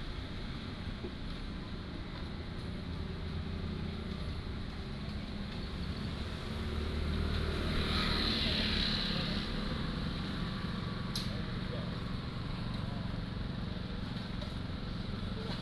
Guangming Rd., Magong City - in the Street
in the Street, Traffic Sound, The crowd, In front of the temple